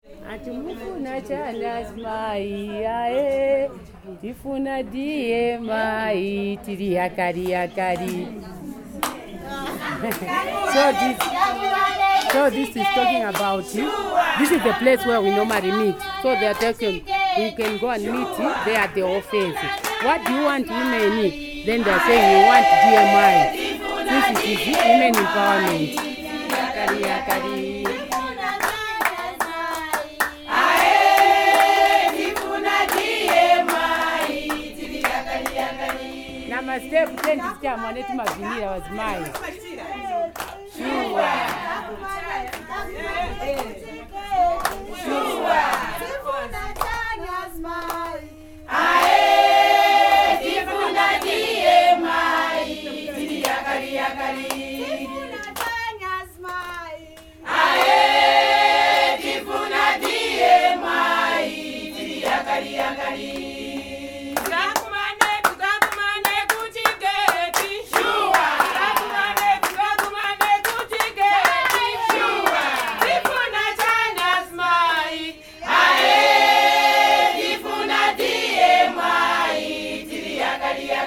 a meeting with representatives from seven of the DMI women groups in their regular meeting place in Chipata/ Lusaka. The women sing and dance community awareness in songs about women empowerment, HIV/ AIDS or childcare.
Lusaka Province, Zambia